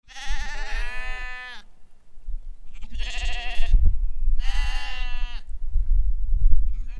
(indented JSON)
{
  "title": "Schafe, Alpsömmerung Wysse See",
  "date": "2011-07-08 15:50:00",
  "description": "Schafe, Schwarznasen und ander Arten, Blöken, Wind stört, Abstieg vom Restipass Richtung Rinderhütte, im Winter Skiseilbahn, im Sommer tote Hose",
  "latitude": "46.37",
  "longitude": "7.68",
  "timezone": "Europe/Zurich"
}